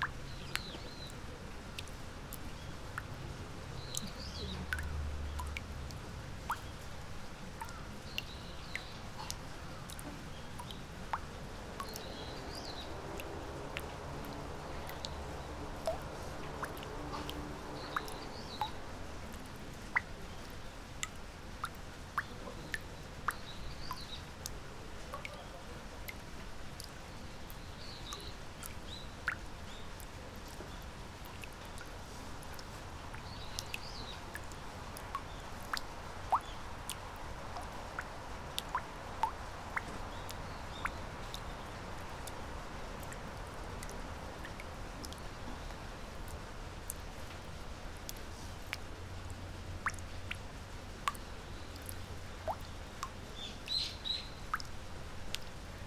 Osaka, Shitennoji Temple, Gokuraku-jodo Garden - droplets from a bamboo pipe
droplets hitting surface of water on a big plate, voices of children and teachers from a nearby building.